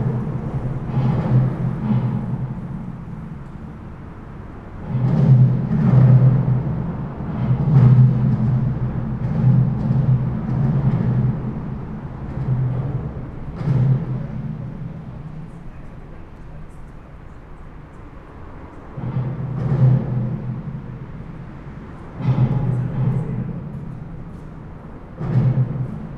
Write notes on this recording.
traffic noise under the bridge, borderline: september 24, 2011